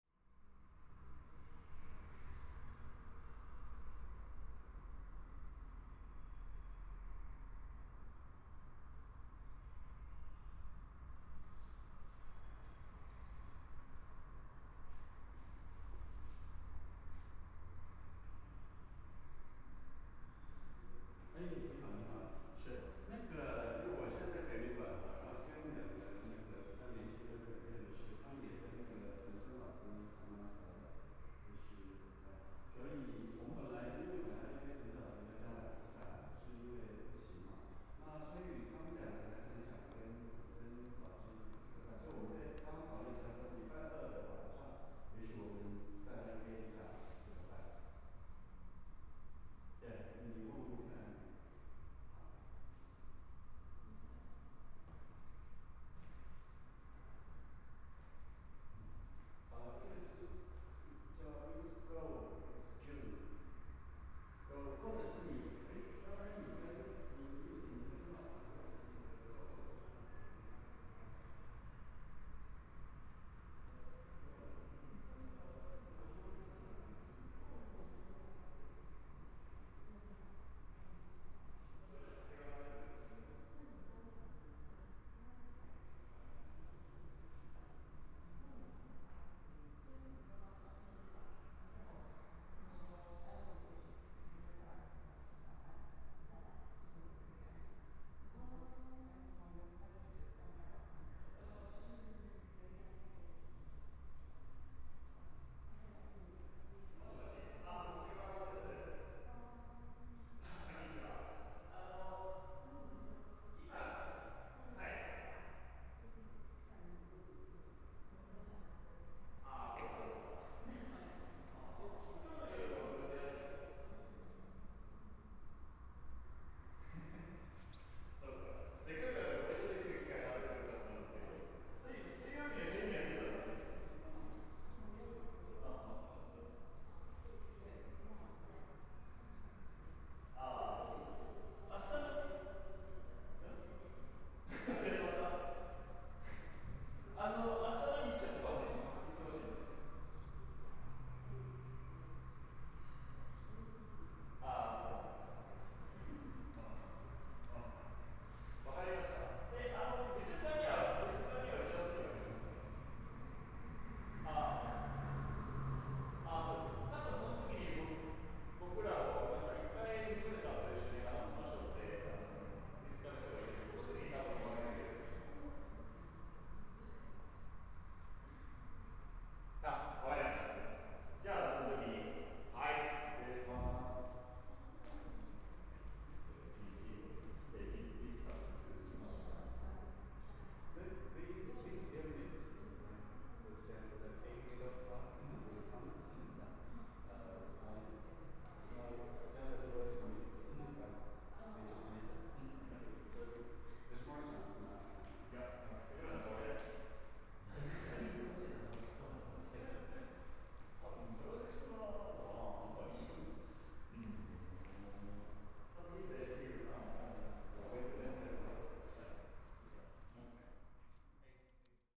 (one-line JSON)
{"title": "TAIPEI FINE ARTS MUSEUM, Zhongshan District - sounds of the space", "date": "2014-01-20 11:56:00", "description": "environmental sounds of the Exhibition space, Aircraft traveling through, Binaural recordings, Zoom H4n + Soundman OKM II", "latitude": "25.07", "longitude": "121.52", "timezone": "Asia/Taipei"}